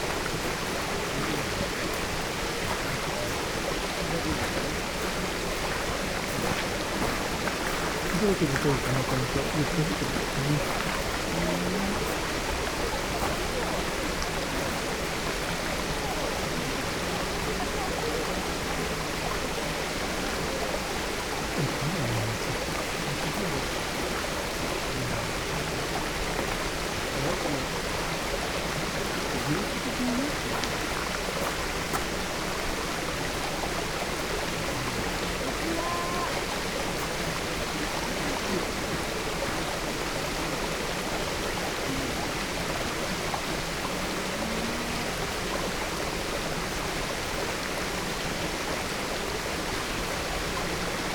waterfall, Shugakuin Imperial Villa, Kjoto - water flux
garden sonority, voices
Kyōto-shi, Kyōto-fu, Japan, 1 November 2014